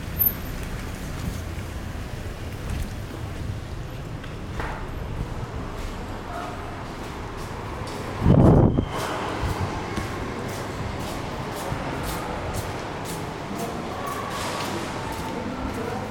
One of a series of sound walks through Qatar's ubiquitous shopping malls